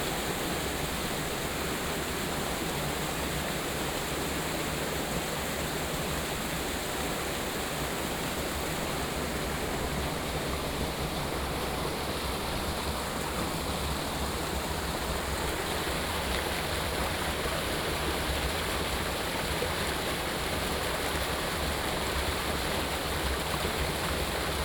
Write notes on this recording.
Stream sound, House in the mountains, Sonu PCM D100 XY